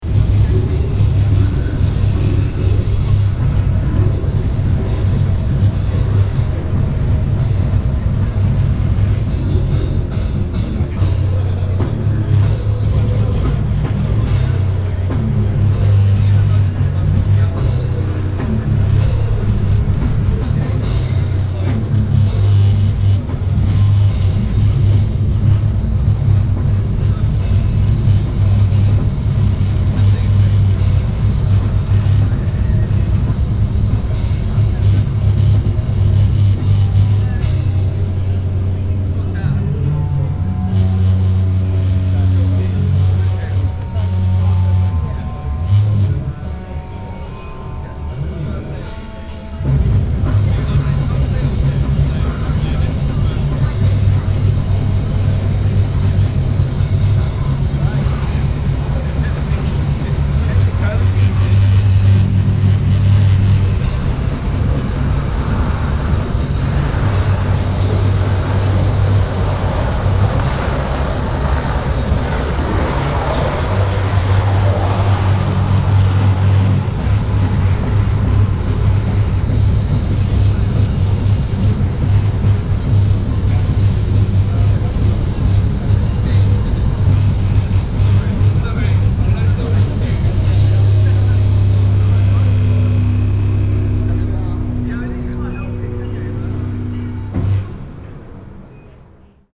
Brighton, The City of Brighton and Hove, Vereinigtes Königreich - Brighton, Prince Albert, concert
In front of the Prince Albert - a bar and rock club. The sound of a dark metal concert resonating through the venues windows, surprisingly at full daytime - at the end the sound of a skateboarder riding downhill the Trafalgar Street passing by.
international city sounds - topographic field recordings and social ambiences